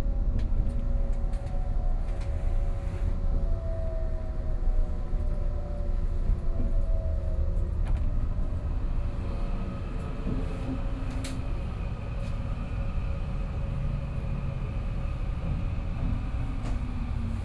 Yilan Line, Yilan County - Puyuma Express
Puyuma Express, to Yilan Railway Station
Sony PCM D50+ Soundman OKM II
Yilan City, 特一號道路36號, July 2014